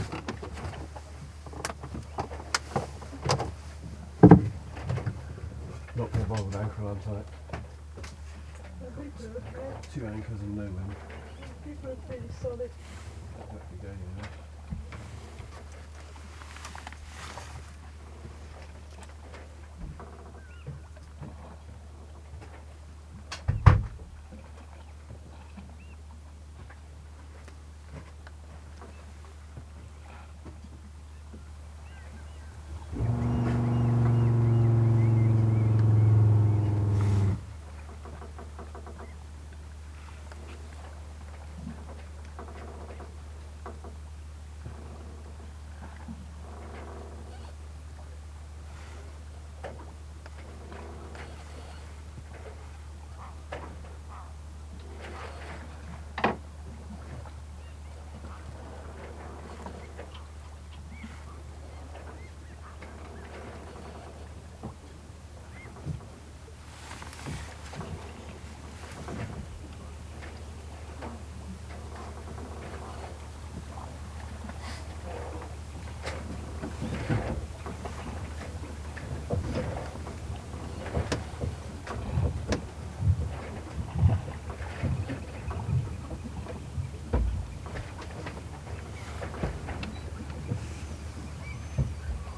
Kildonan Bay, Isle of Eigg - Two Anchors & No Wind
Recorded with a pair of DPA 4060s, an Aquarian Audio H2a hydrophone and a Sound Devices MixPre-3